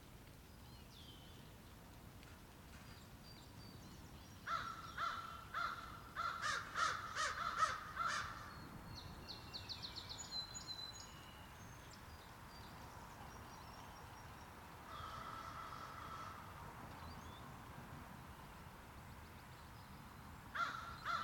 Chemin du Bout den Bas, La Baleine, QC, Canada - Isle-aux-Courdes, Forest, Amb
Ambiance, stereo, Semi-Forest
Capitale-Nationale, Québec, Canada, 1 July 2021